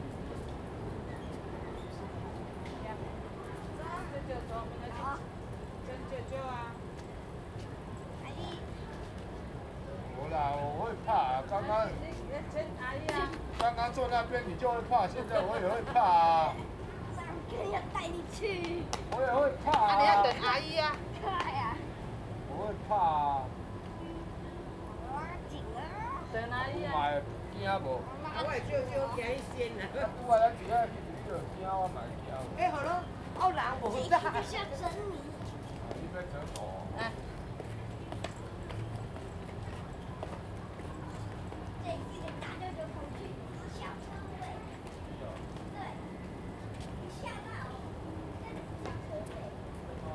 Holiday and Visitor, Footsteps
Zoom H2n MS+ XY